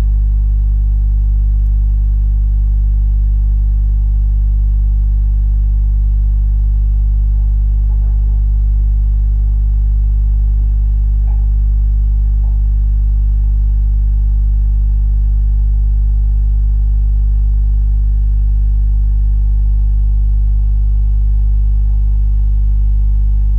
Poznan, living room - subwoofer
sounds of circuits of my 150W subwoofer. no audio source is connected to it. this is its heartbeat. recorder gain cranked up to pick up the vibrations.